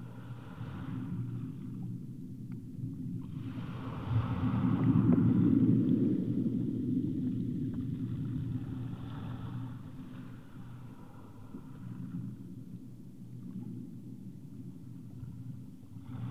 Kos, Greece, contacts on seashore
contact microphones in the seashore's stones
11 April 2016